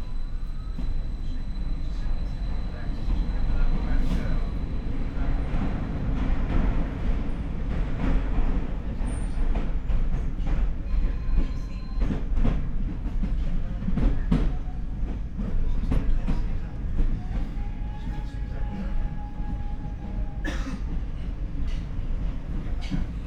metro train soundscape on line 1
(Sony PCM D50, Primo EM172)